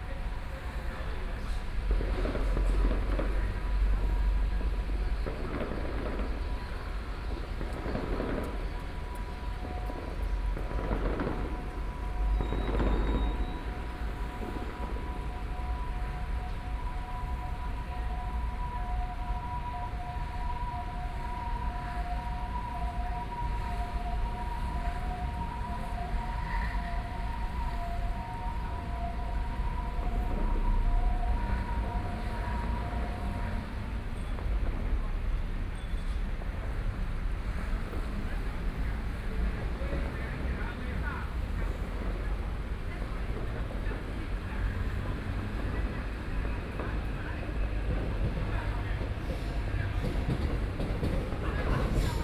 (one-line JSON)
{"title": "Zhuwei, New Taipei City - Park entrance", "date": "2013-10-26 20:01:00", "description": "Traffic Noise, Far from being applied fireworks, MRT trains through, Binaural recordings, Sony PCM D50 + Soundman OKM II", "latitude": "25.14", "longitude": "121.46", "altitude": "9", "timezone": "Asia/Taipei"}